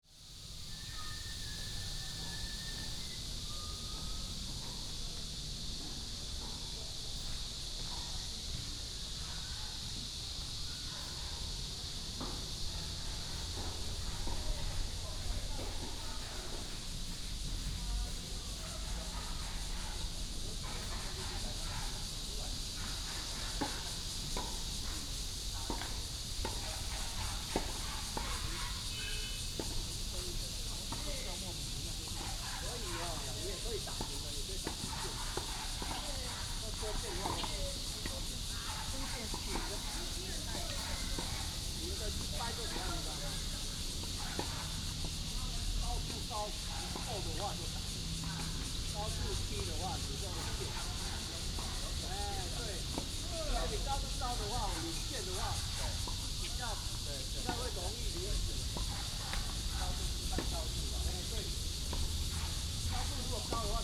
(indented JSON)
{
  "title": "陽明運動公園, Taoyuan City - tennis court",
  "date": "2017-07-15 18:56:00",
  "description": "Walking in the tennis court, Cicadas, sound of birds, Traffic sound",
  "latitude": "24.98",
  "longitude": "121.31",
  "altitude": "109",
  "timezone": "Asia/Taipei"
}